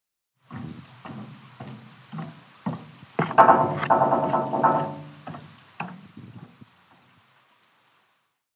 Footsteps across the old bridge. Walking Holme
Kirklees, UK, 19 April 2011